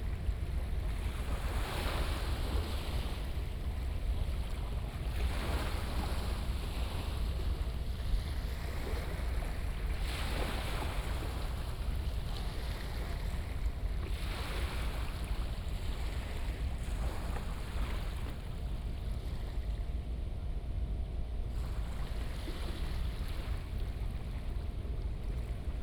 淡水區文化里, New Taipei City, Taiwan - Small pier
Small pier, Sound tide